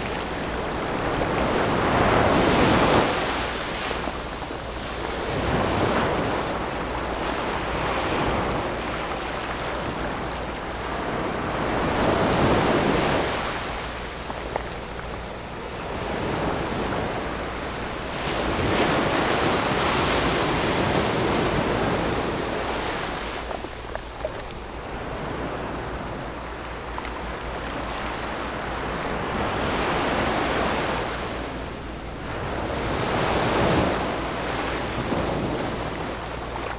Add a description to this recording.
Sound of the waves, Very hot weather, In the beach, Zoom H6+ Rode NT4